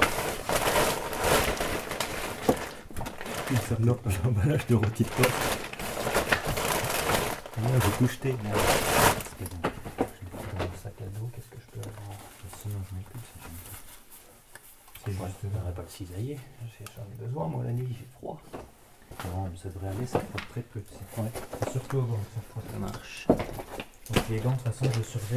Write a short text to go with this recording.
We are exploring a very inclined tunnel. It's hard to find a way inside the underground mine.